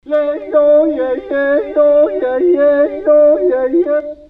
hoscheid, sound object, wald telefon
Also to be found on the Hoscheid Klangwanderweg - sentier sonore, is this sound object by Michael Bradke entitled Wald Telefon.
Its a plastic tube construction in between the trees, that can be called in. Here recording no. 01 - a Yodel ;-)
Hoscheid, Klangobjekt, Waldtelefon
Dieses Klangobjekt von Michael Bradke mit dem Titel Waldtelefon ist auf dem Klangwanderweg von Hoscheid. Es ist eine Röhrenkonstruktion zwischen den Bäumen, in die man hineinrufen kann. Hier ist die Aufnahme Nr. 1: ein Jodeln.
Mehr Informationen über den Klangwanderweg von Hoscheid finden Sie unter:
Hoscheid, élément sonore, téléphone sylvestre
Cet objet acoustique de Michael Bradke intitulé le Téléphone Sylvestre, peut aussi être rencontré sur le Sentier Sonore de Hoscheid. Il s’agit d’une construction en tube de plastique entre les arbres qui permet de s’envoyer des messages. Voici l’enregistrement n°1 – un jodel ;-)
Informations supplémentaires sur le Sentier Sonore de Hoscheid disponibles ici :
Hoscheid, Luxembourg